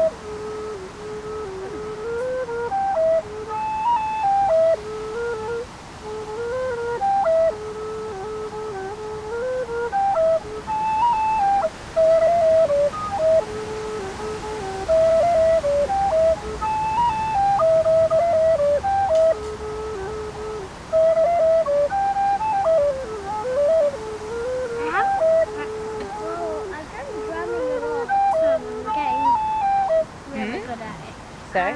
Near the Octagon, Glen of the Downs, Co. Wicklow, Ireland - Fireside Music
This is a recording made with the trusty EDIROL R09 sitting at the fireside in the morning at the camp by the Octagon, playing music with Jeff's recorders, accompanied by two budding musicians, Hawkeye and Bea. Bea is on percussion, Hawkeye is on recorder. The wind sings with us and you can hear other comrades from the camp speaking as we sit in the smoke, listening and sounding together.